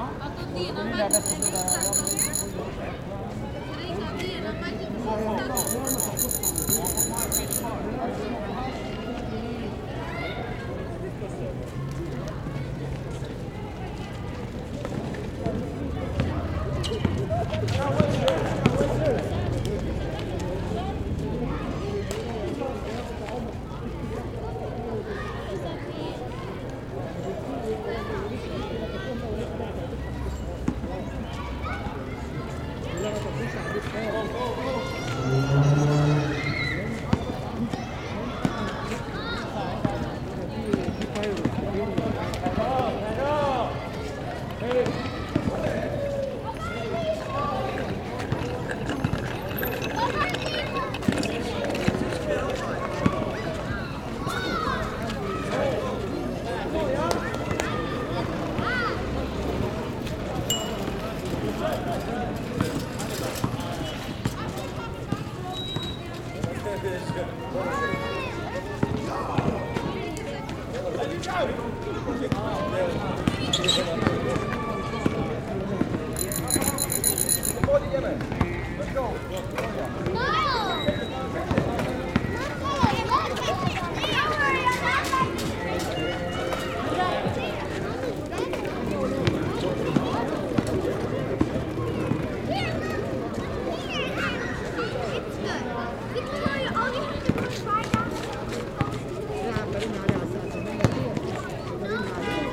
Benninger Playground, Fresh Pond Road, Madison St, Ridgewood, NY, USA - Ridgewood Playground
Late afternoon at the Benninger Playground in Ridgewood, Queens.
Sounds of children playing, bicycle bells, basketball sounds, and music.
Zoom h6
United States, 22 March